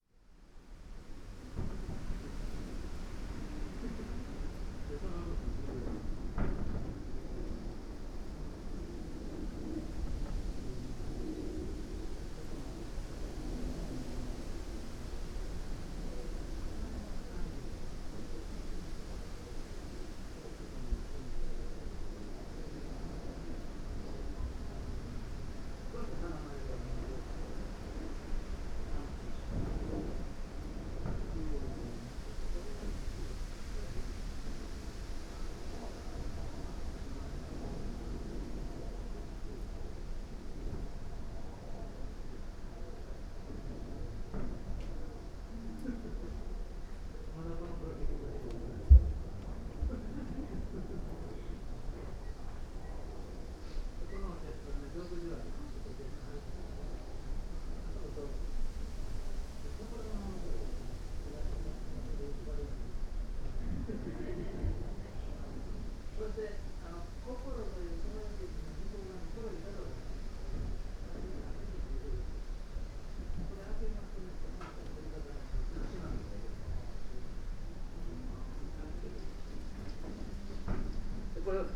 veranda, Daisen-in, Kyoto - quiet garden
gardens sonority, wind, murmur of people, wooden house